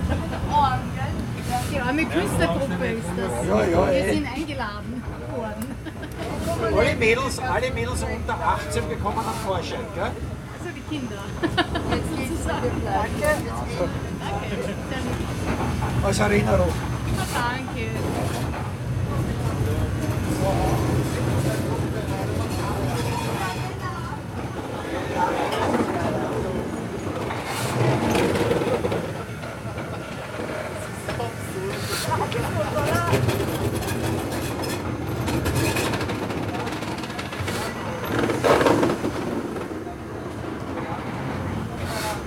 Siller-Straße, Strasshof an der Nordbahn, Österreich - locomotive ride
Eisenbahnmuseum Strasshof: short passenger ride with historic steam locomotive